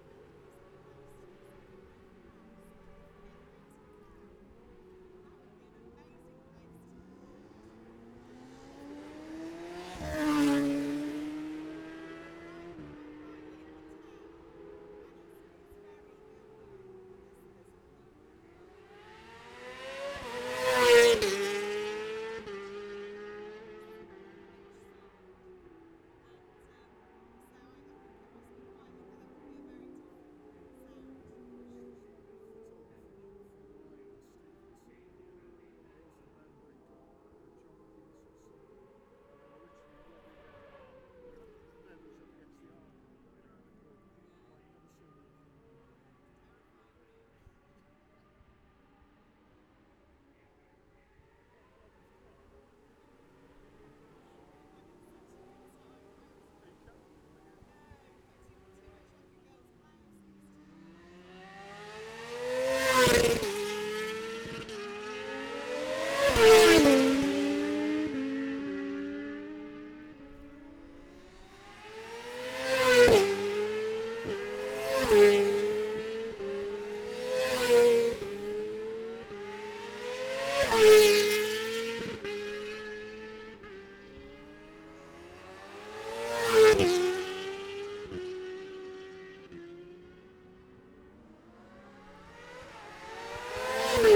Jacksons Ln, Scarborough, UK - Gold Cup 2020 ...
Gold Cup 2020 ... 600 evens qualifying ... dpas bag MixPre3 ... Memorial out ... red-flagged ...